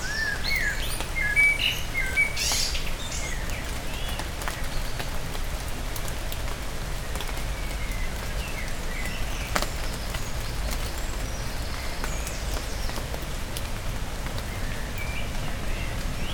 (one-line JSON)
{"title": "Mont-Saint-Guibert, Belgique - Rain", "date": "2016-06-04 21:10:00", "description": "In an abandoned mill, rain is falling. In the wet trees, blackbirds give a delicious song.", "latitude": "50.64", "longitude": "4.61", "altitude": "87", "timezone": "Europe/Brussels"}